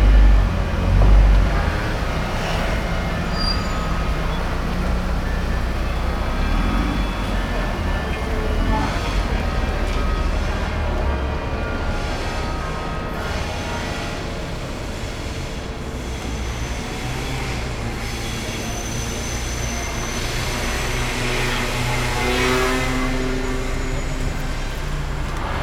Rapallo, in front of train station - piazza ambience
a man watering a a flower bed in front of the station. heavy traffic at the road crossing. bells in the distance are played manually by a man in the church tower.
Rapallo Genoa, Italy